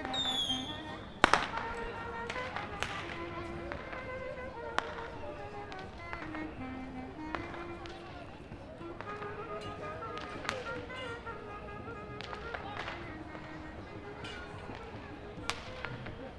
Christmas eve 2007 in main Square of Cusco Perú. BY ACM
Cuzco, Peru, 24 December 2007, ~21:00